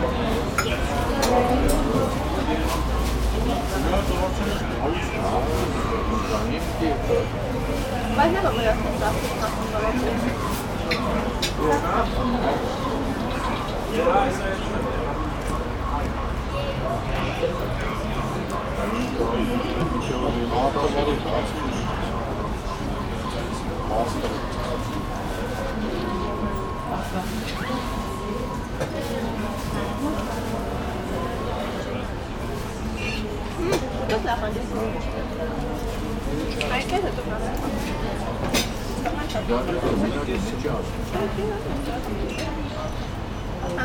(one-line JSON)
{"title": "Yerevan, Arménie - Night ambiance", "date": "2018-09-07 20:00:00", "description": "Along the Zatar pizza restaurant, evening ambiance with clients quiet discussions and loud traffic on the nearby Tigran Mets avenue.", "latitude": "40.18", "longitude": "44.51", "altitude": "987", "timezone": "Asia/Yerevan"}